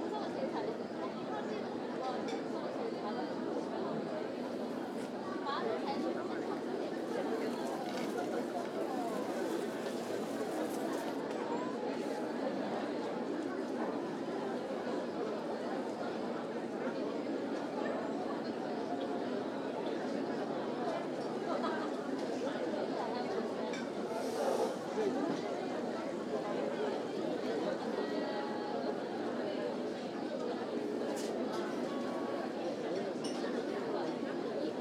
대한민국 서울특별시 서초구 서초동 산130-9 - Seoul Arts Center, Outdoor Cafe
Seoul Arts Center, Outdoor Cafe
예술의전당, 야외 까페